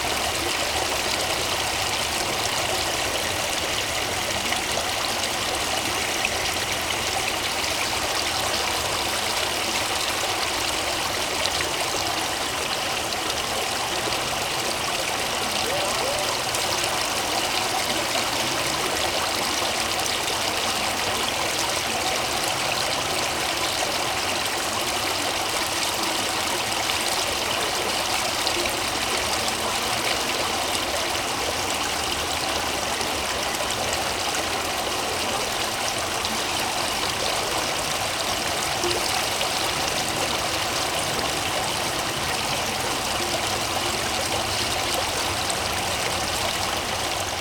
Pyramide du Louvre Paris
Pyramide du Louvre
pointe du bassin
Paris, France, 18 May 2010, 15:01